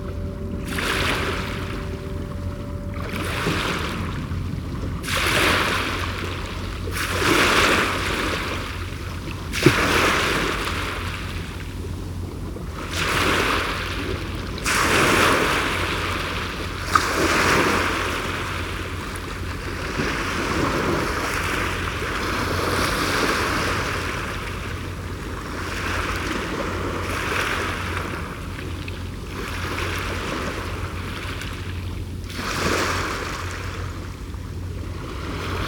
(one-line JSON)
{"title": "Staten Island", "date": "2012-01-09 16:37:00", "description": "waves and boats. parabolic microphone", "latitude": "40.64", "longitude": "-74.17", "altitude": "2", "timezone": "America/New_York"}